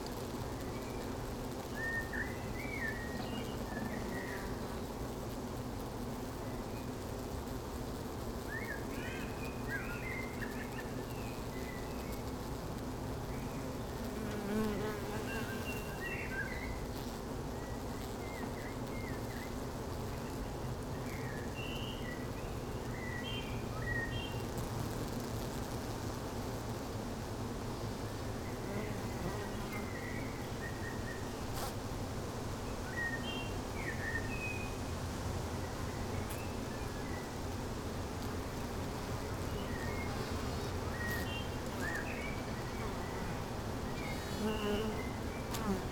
Beselich, Deutschland - bee hive
Beselich, Niedertiefenbach, Ton, former clay pit, bee hive
(Sony PCM D50)